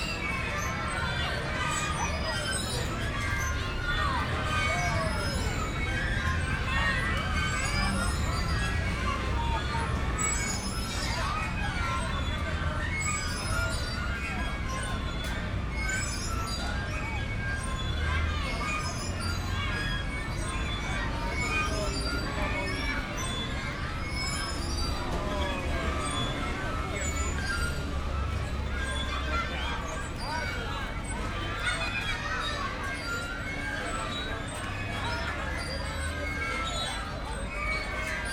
Plaza Simon Bolivar, Valparaíso, Chile - playground swings
Plaza Simon Bolivar, Valparaíso, Chile, on a Saturday spring evening, kids enjoying the squeaking swings on this square, which is surrounded by heavy traffic.
(SD702, DPA4060)
Región de Valparaíso, Chile